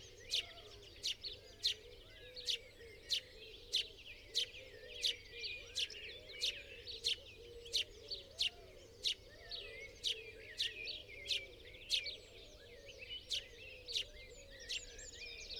Unnamed Road, Malton, UK - dawn chorus ... 2020:05:01 ... 05.10 ...
dawn chorus ... in a bush ... dpa 4060s to Zoom H5 ... mics clipped to twigs ... brd song ... calls from ... tree sparrow ... wren ... chiffchaff ... chaffinch ... great tit ... pheasant ... blackbird ... song thrush ... wood pigeon ... collared dove ... dunnock ... goldfinch ... starling ... crow ... jackdaw ... some traffic ... quiet skies ...
1 May 2020